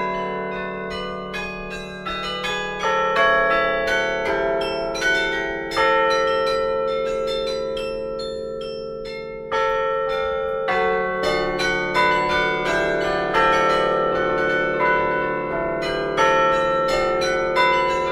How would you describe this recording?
Pascaline Flamme playing at the Tournai carillon, in the belfry. It's a beautiful instrument.